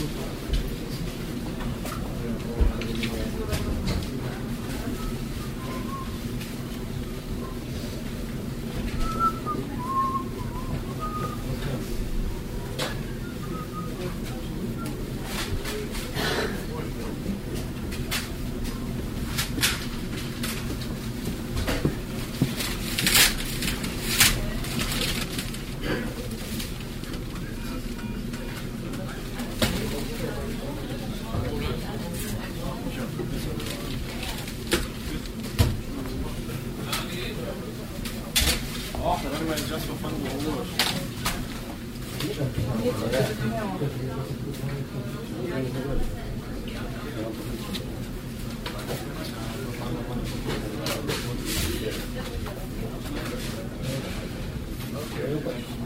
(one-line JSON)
{"title": "mettmann, straßenverkehrsamt", "date": "2008-04-19 10:51:00", "description": "im straßenverkehrsamt, vormittags\nproject: : resonanzen - neanderland - social ambiences/ listen to the people - in & outdoor nearfield recordings", "latitude": "51.25", "longitude": "6.97", "altitude": "149", "timezone": "Europe/Berlin"}